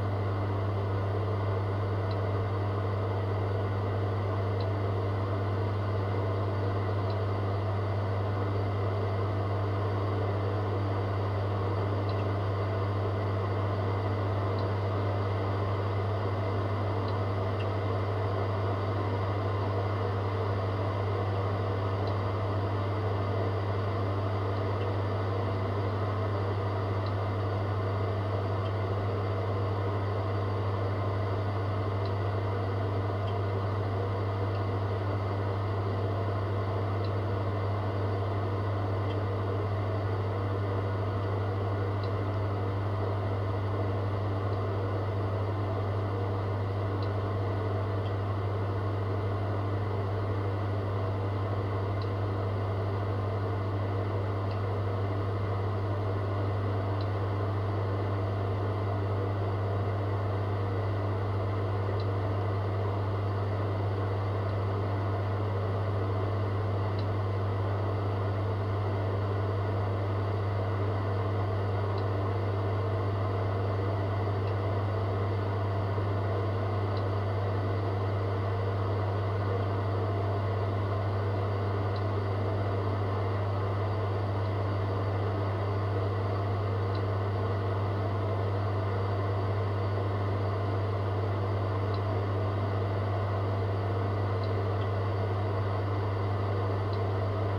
Unnamed Road, Malton, UK - the boiler ...
the boiler ... pair of jr french contact mics either side of casing ... there are times of silence ... then it fires up at 08:45 and 14:00 ...
5 February 2019, 09:00